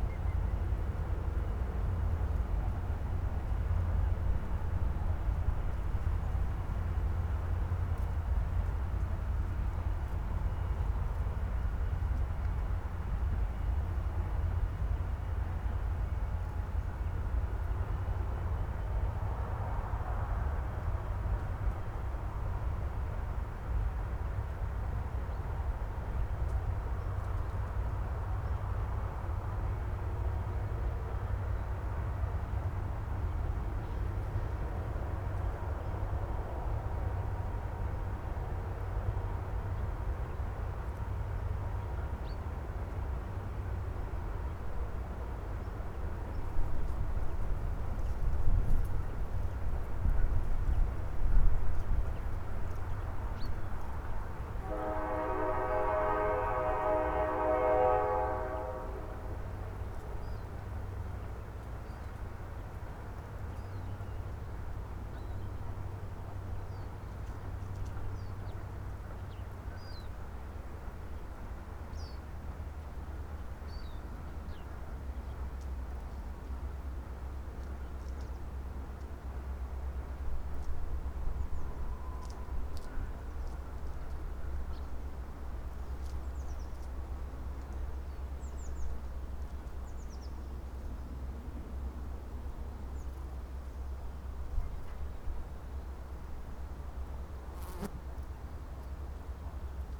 Poznań outskirts, Morasko, around campus construction site - freight train
a sturdy freight train slithering about one kilometer away twined in bells of warning poles, echoed from slender apartment buildings located even further away. birds chirping here and there, a fly taking a breather on the microphone. recording rig a bit too noisy for recording of such quiet space and to pick up of the tumbling train in the distance.